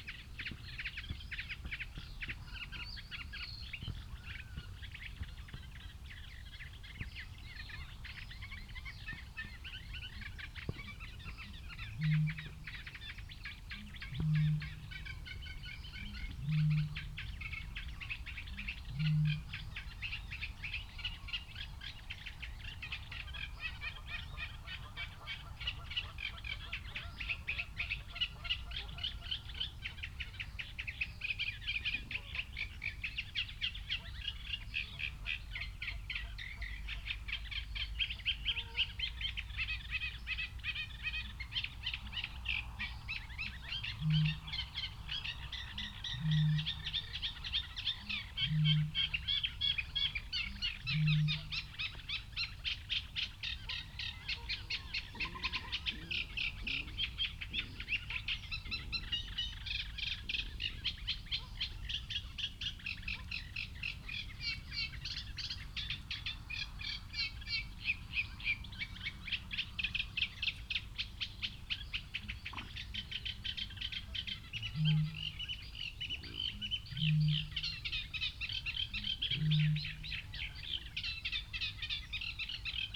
London Drove, United Kingdom - distant booming bittern soundscape ...
distant booming bittern soundscape ... north hide ... lavalier mics clipped to sandwich box ... bird call ... song ... from ... reed warbler ... canada goose ... pheasant ... coot ... reed bunting ... mute swan ... carrion crow ... mallard ... cetti's warbler ... gadwall ... cuckoo ... tawny owl ... great tit ... 2:48 a mute swan tries to drown ..? a canada goose in an adjacent lake ... only surmising ... too dark to see ... traffic noise ...